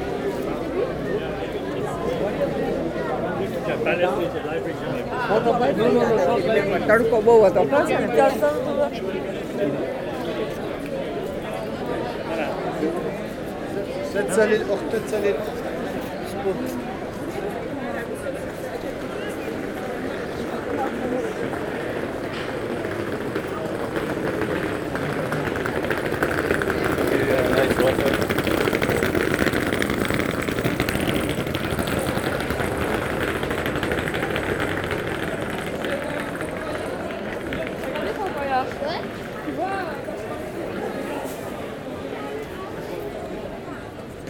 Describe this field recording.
Brussels, the very famous Manneken-Pis, a statue of a baby pissing. You must be Belgian to understand, perhaps ! The same sound as everybody ? Yes probably, the place is invariable !